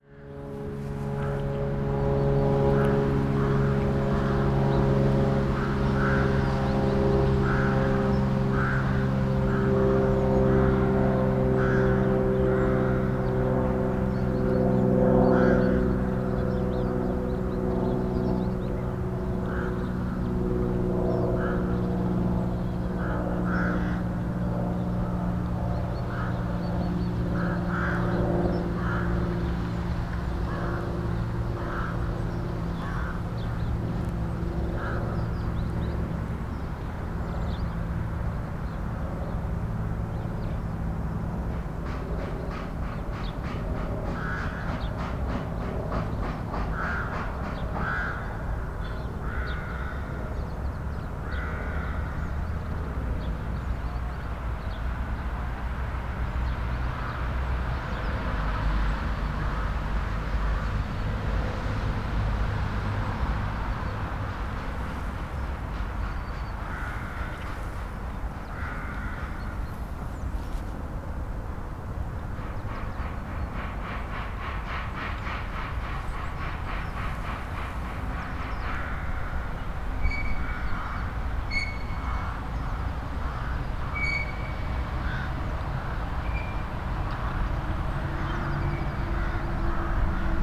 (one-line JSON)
{"title": "Poznan, Mateckiego street. city limits - field", "date": "2016-02-18 12:23:00", "description": "short stop on a nearby field. caws of a flock of crows reverberate here nicely. a turbo-propeller plane going astray. noisy street behind me. some construction close among the buildings. (sony d50)", "latitude": "52.46", "longitude": "16.90", "altitude": "97", "timezone": "Europe/Warsaw"}